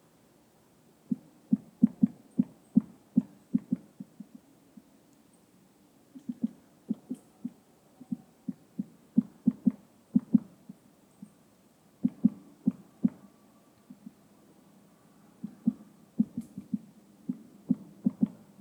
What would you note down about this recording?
woodpecker in village soundscape